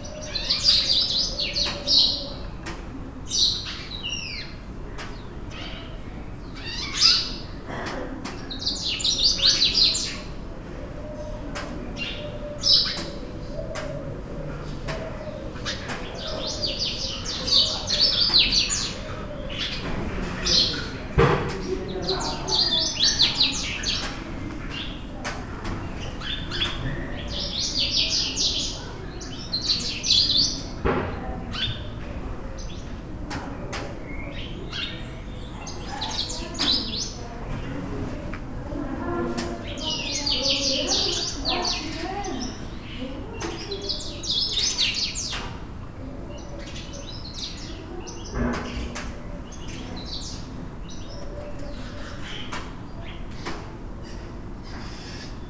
Rue Talaa Sghira, Fes, Morocco - House buntings in Fez medina
Morning atmosphere. Close house buntings (sitting on house roofs). Distant voice. A fan starts around 1 min.
Bruants du Sahara au matin et voix lointaines. Un ventilateur se met en marche vers 1 min.